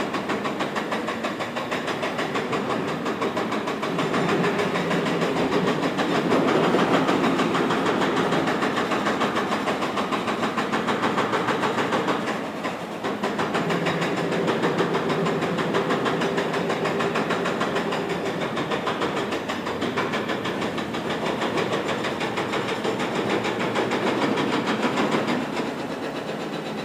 Ave, New York, NY, USA - Loud construction site on 5th Ave
Loud construction site on 5th Avenue.